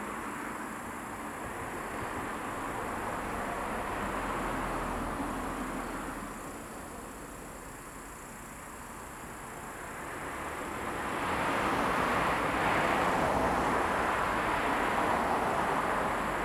明峰村, Beinan Township - Small roadside temple
A small village in the evening, Traffic Sound, Sound of insects, Dogs barking
Zoom H2n MS +XY